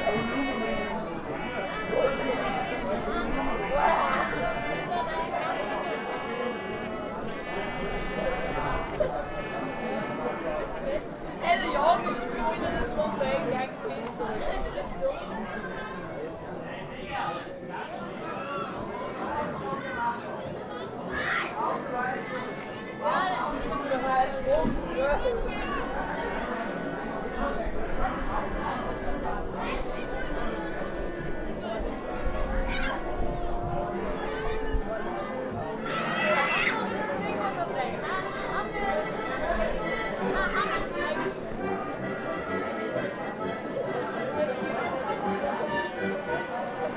ulrichsberg, esv-halle - pausen-atmo »personen der handlung - ein fest«, peter ablinger: »landschaftsoper ulrichsberg«, VII. akt